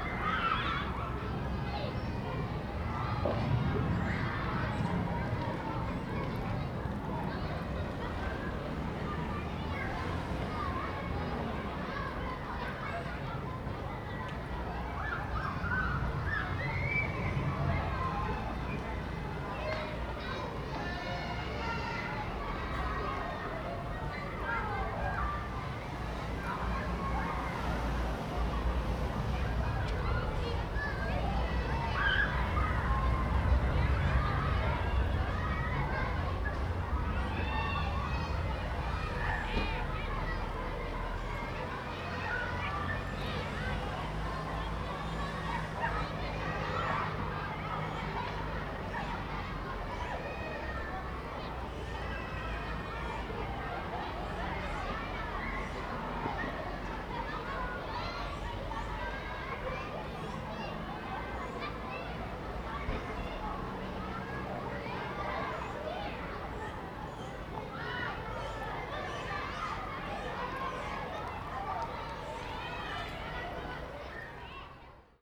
Centre International de Valbonne, Sophia Antipolis, France - Children playing in nearby school
You can hear children playing in a nearby school, birdcall, footsteps, the drone of an aeroplane, and cars.
Recorded on a staircase in the Centre International de Valbonne.
Recorded with a ZOOM H1